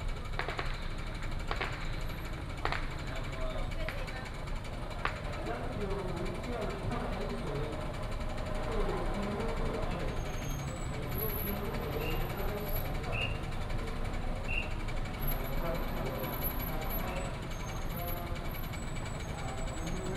Firecrackers and fireworks, Many people gathered at the intersection, Matsu Pilgrimage Procession
Huwei Township, Yunlin County, Taiwan, 3 March 2017, 16:41